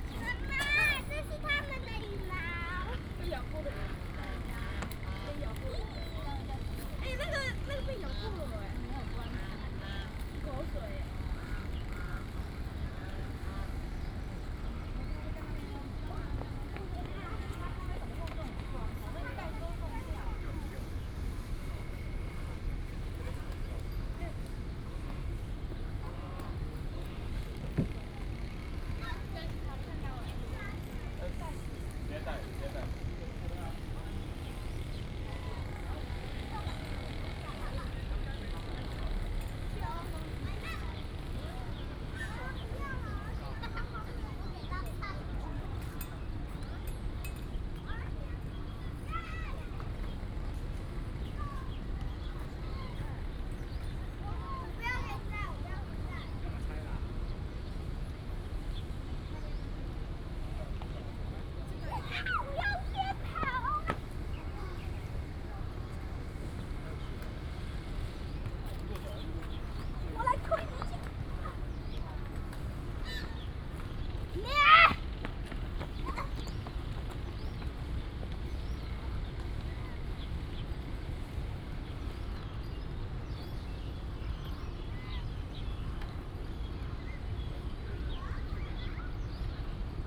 醉月湖, National Taiwan University - At the lake
At the university, Bird sounds, Goose calls